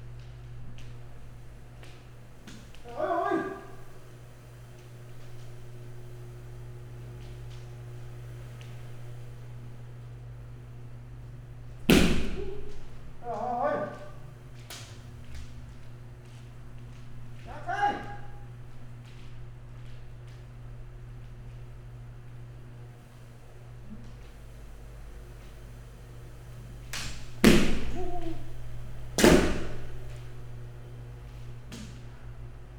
검도관 with bamboo swords Kendo Dojo
검도관_with bamboo swords_Kendo Dojo